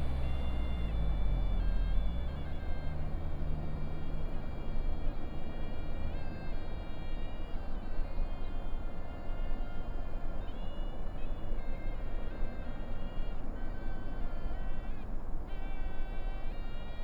金獅步道, Hukou Township, Hsinchu County - Near high-speed railroads
Near high-speed railroads, traffic sound, birds, Suona
12 August 2017, 17:28